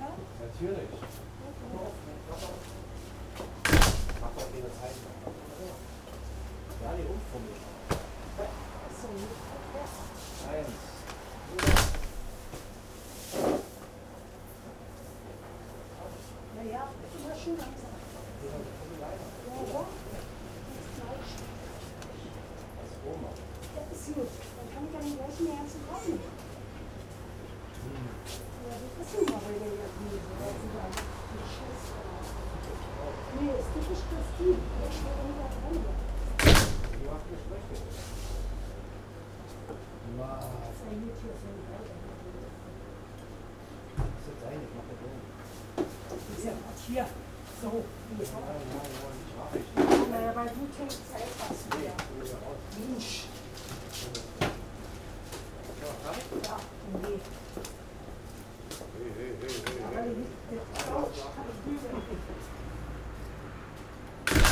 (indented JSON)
{
  "title": "berlin, hermannstr. - waschsalon / laundry",
  "description": "couple arguing, laundry ambience",
  "latitude": "52.47",
  "longitude": "13.43",
  "altitude": "59",
  "timezone": "Europe/Berlin"
}